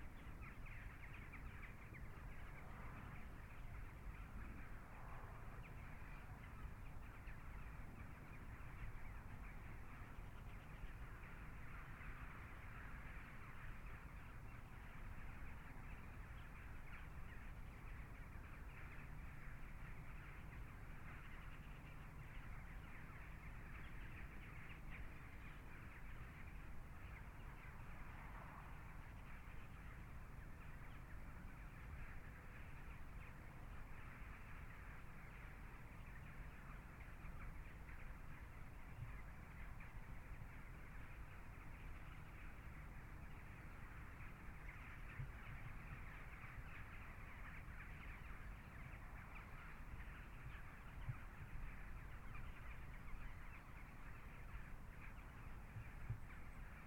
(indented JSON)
{
  "title": "Oud Vliegveld, Gent, België - Oud Vliegveld",
  "date": "2019-02-03 17:24:00",
  "description": "[Zoom H4n Pro] Sundown at the old airstrip in Oostakker/Lochristi. In the First World War, the Germans deposited tonnes of sand on the site to turn it into an airstrip. It was never used because the war ended, and now the sand is being mined. This created a large body of water, home to many birds.",
  "latitude": "51.09",
  "longitude": "3.80",
  "altitude": "10",
  "timezone": "Europe/Brussels"
}